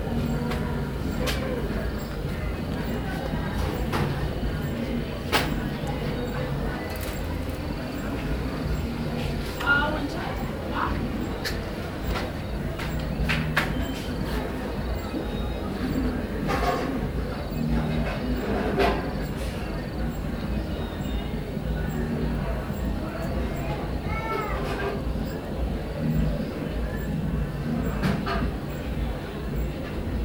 Makokoba, Bulawayo, Zimbabwe - i live in Makokoba...
An area of residential blocks and shacks in Makokoba; supper time, having a smoke on a little balcony; listening into the hum of sounds and voices…; my phone rings (I’m part of this life); end of transmission.
archived at: